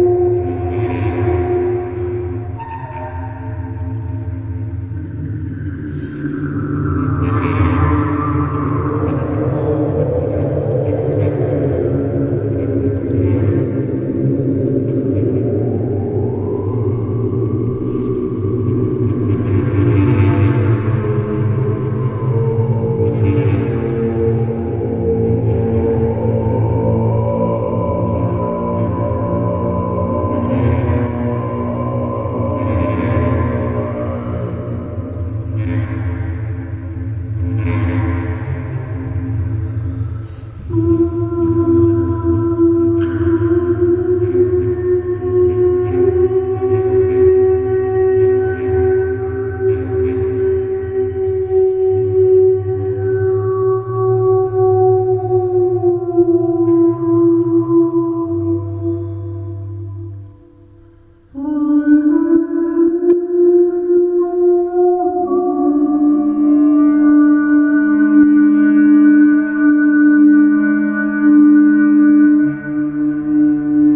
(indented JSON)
{
  "title": "Kirche am Tempelhofer Feld",
  "date": "2011-04-15 17:40:00",
  "description": "Innenraum mit Stimme+Bassklarinette (wanco)",
  "latitude": "52.48",
  "longitude": "13.38",
  "altitude": "51",
  "timezone": "Europe/Berlin"
}